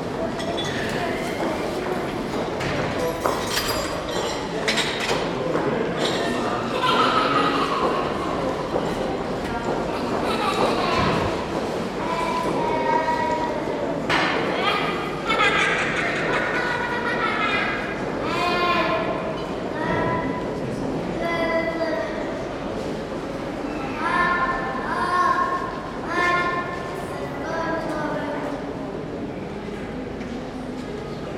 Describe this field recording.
In the café of the Václavská passage.